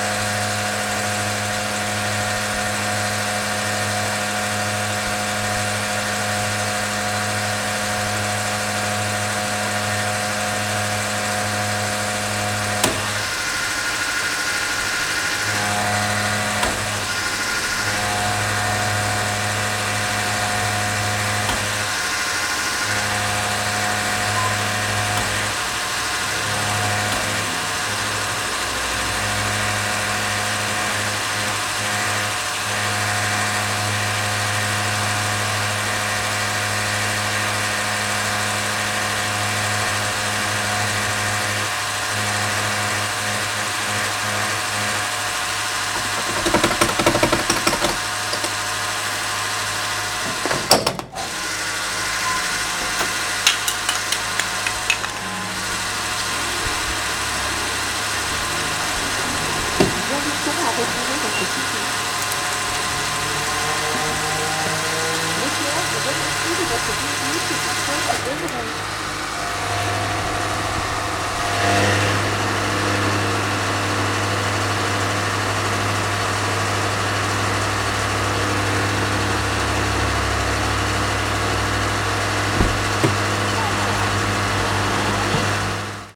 Leclerc Gas station, Yzeure, Edouard vous souhaite une bonne route
France, Auvergne, Gas station, car
Avermes, France